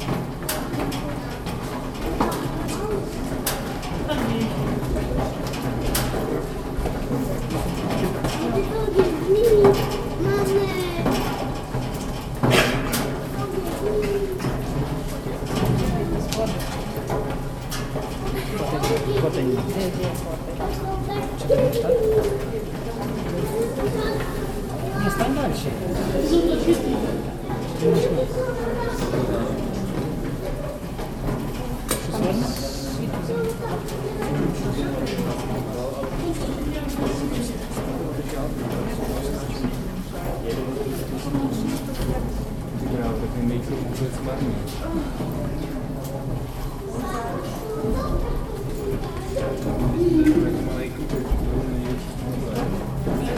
sounddocumentary from the tour inside the Caves